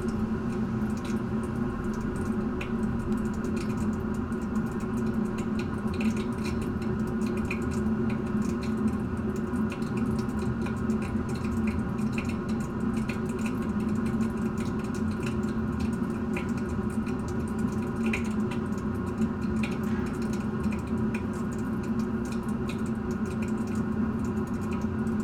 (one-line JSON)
{"title": "Krzywy Las, Poland - Pipe / Crooked Forest", "date": "2016-07-20 16:22:00", "description": "Pipe from municpal heating system in the Crooked Forest between Gryfino and Szczecin", "latitude": "53.21", "longitude": "14.48", "altitude": "5", "timezone": "Europe/Warsaw"}